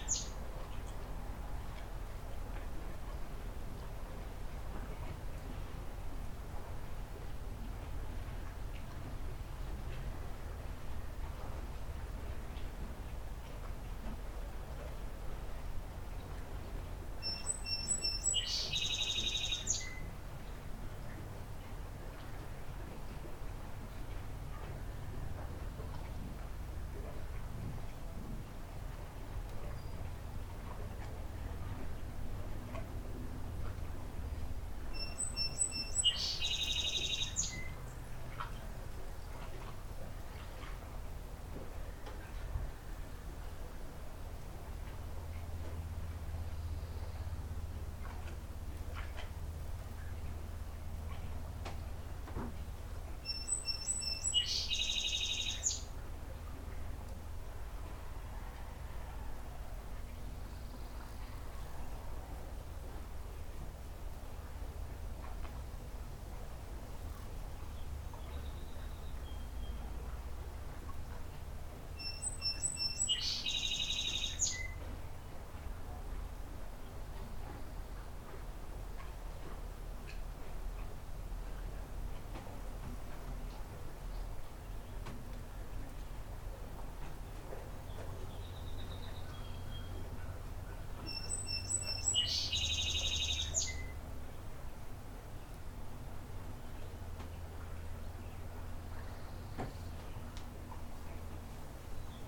Juniper Island, ON, Canada - Juniper Island Porch
On the porch of the Juniper Island Store (before it opened for the summer season), looking out over Stony Lake, on a warm sunny day. Recorded with Line Audio OM1 omnidirectional microphones and a Zoom H5.